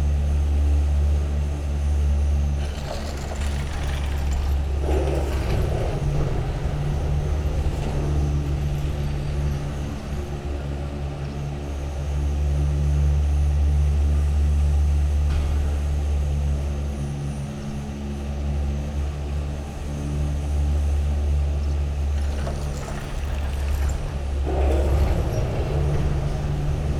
Maribor, Pohorje ropeway - ground station
sound of the Pohorje ropeway ground station, the whole structure is resonating. most arriving cabins are empty, the departing ones are crowded by downhill bikers.
(SD702, DPA4060)